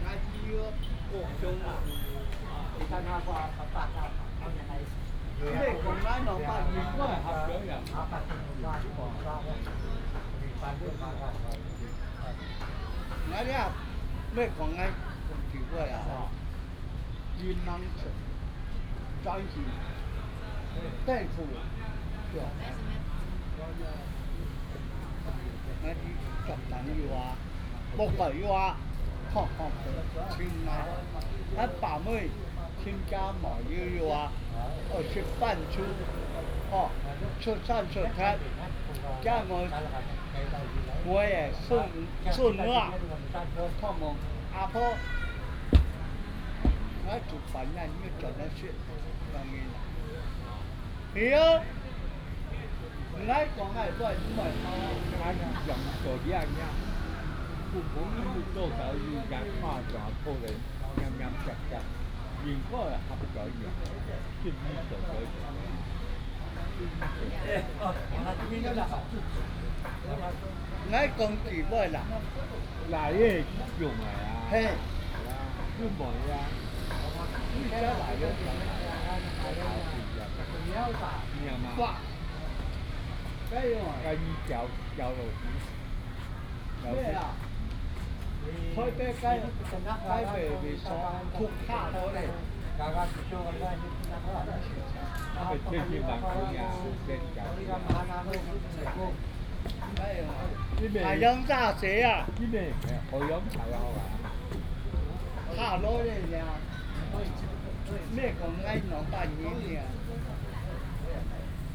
竹東客家戲曲公園, 新竹縣竹東鎮 - Talking voice of the elderly
Talking voice of the elderly, Hakka language, Aboriginal language